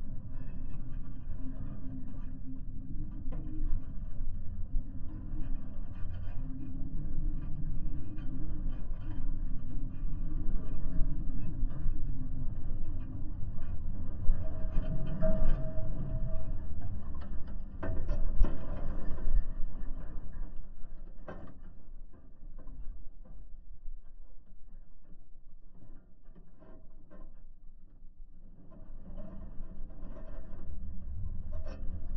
Vyžuonos, Lithuania, found spiked wire
a piece of spiked wire found in a field. listening through contact microphones